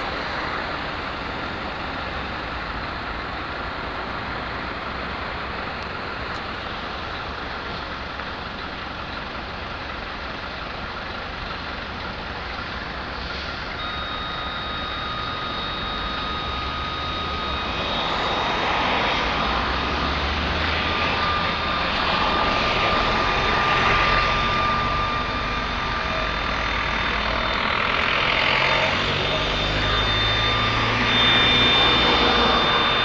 Pedestrian crossing, Szczecin, Poland - Pedestrian crossing, Szczecn, Poland
A pedestrian crossing.
22 September 2010, 18:16